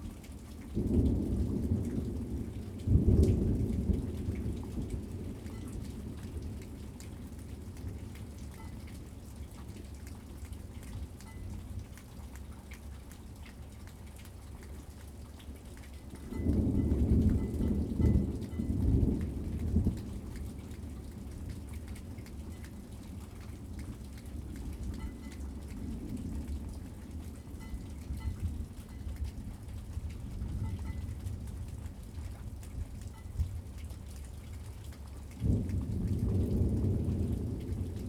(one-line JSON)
{"title": "Suffex Green Ln NW, Atlanta, GA, USA - Winter Thunderstorm", "date": "2019-02-19 15:49:00", "description": "A recording of a thunderstorm we had back in February. We had about two weeks of horrible weather where we got nothing but rain. I suppose that's better than what some of the northern states had to deal with, but you better believe I was tired of this by week 2!\nRecorded on a Tascam Dr-22WL with \"dead cat\" windscreen and a tripod.", "latitude": "33.85", "longitude": "-84.48", "altitude": "296", "timezone": "America/New_York"}